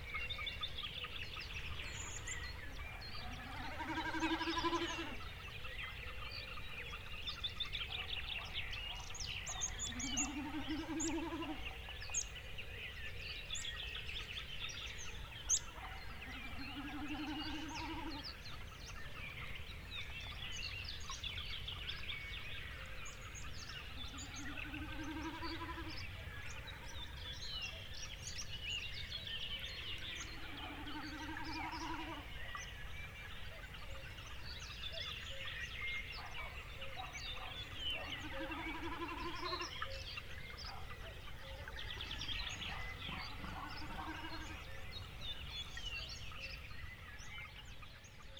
Windy morning on riverplain. Great Snipe, distant thrushes etc
Suure-Jaani vald, Viljandi maakond, Eesti, 1 May 2013